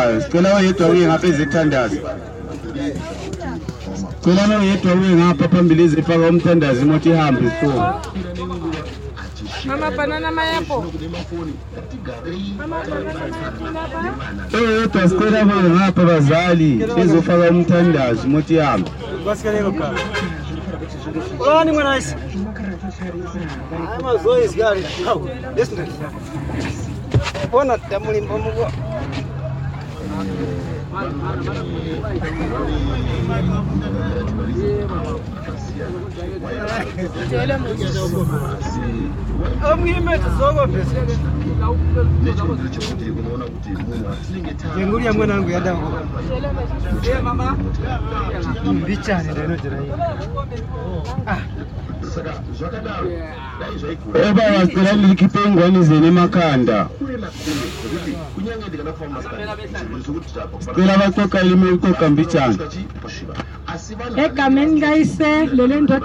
Entumbane Rank, Bulawayo, Zimbabwe - Binga bus leaving Entumbane rank
...the bus is still filled with traders when it starts leaving the rank… the driver reminds that they have to leave… and soon is the last change to drop out… one passenger says a prayer… and off we go on a 6 – 8 hours journey to “the back of beyond”…
(...the mic is an unusual feature… I think it’s the only time in my many journeys that I heard it functioning...)
mobile phone recording
15 May 2016